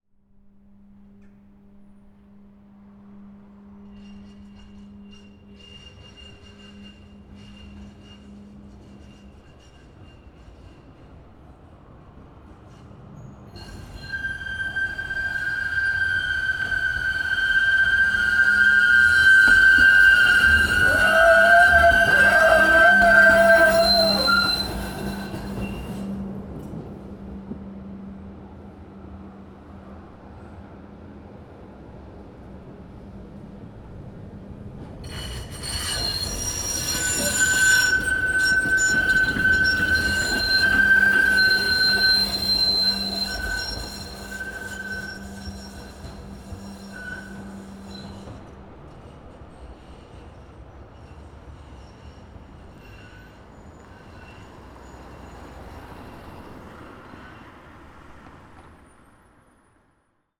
Zeelaan/Dorpsplein, Lombardsijde, Belgien - Trams
"Kusttram" trams screeching in a curve. Zoom H4n.
Middelkerke, Belgium